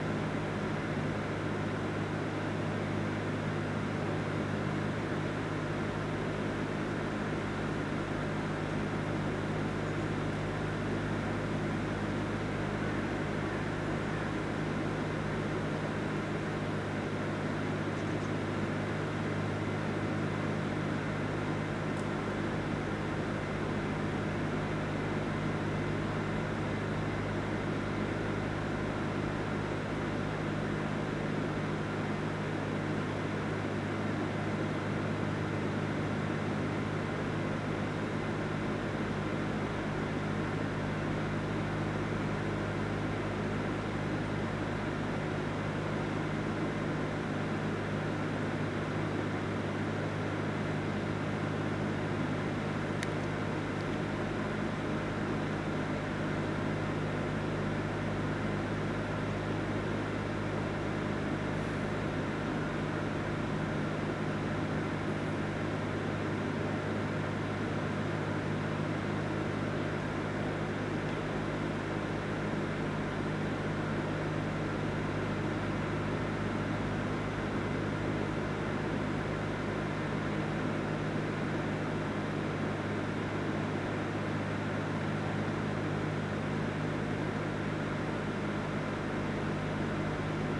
hohensaaten/oder: east lock - the city, the country & me: generator
generator of the east lock
the city, the country & me: january 4, 2016